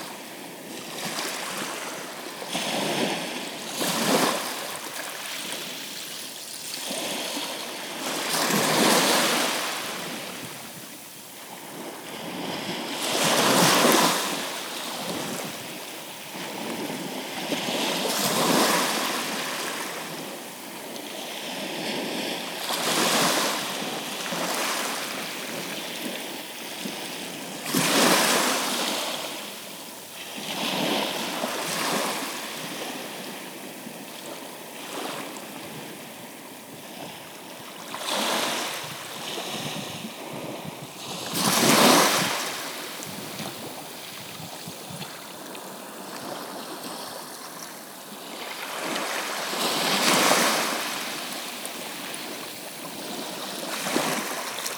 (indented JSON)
{
  "title": "Breskens, Nederlands - The sea",
  "date": "2019-02-17 13:30:00",
  "description": "Sound of the sea on the Breskens beach, and a lot of plovers walking around me.",
  "latitude": "51.40",
  "longitude": "3.57",
  "timezone": "GMT+1"
}